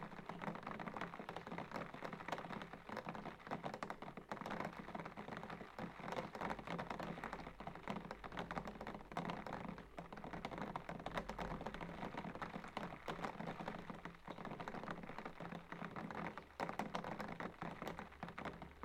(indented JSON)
{
  "title": "Troon, Camborne, Cornwall, UK - Rain On The Window From Inside",
  "date": "2015-08-05 02:00:00",
  "description": "Recorded inside, this is the rain hitting the window. Recorded using DPA4060 microphones and a Tascam DR100.",
  "latitude": "50.20",
  "longitude": "-5.28",
  "altitude": "168",
  "timezone": "Europe/London"
}